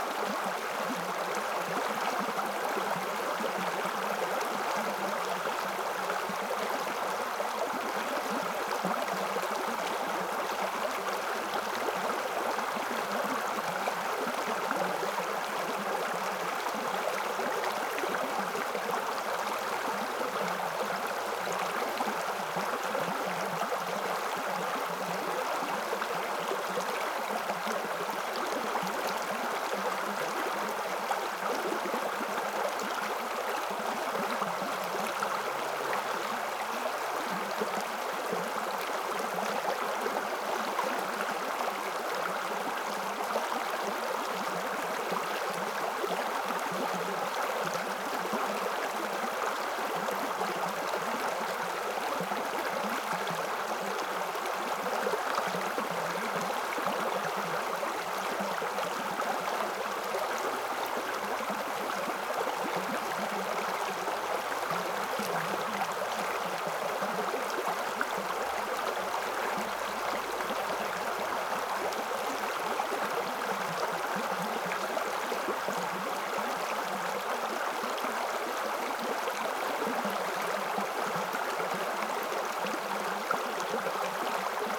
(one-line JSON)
{"title": "Polenz valley, near Hohnstein, Deutschland - river Polenz water flow", "date": "2018-09-20 11:35:00", "description": "Polenztal, river Polenz in its deep valley, sound of water flowing and gurgling\n(Sony PCM D50)", "latitude": "50.97", "longitude": "14.10", "altitude": "188", "timezone": "GMT+1"}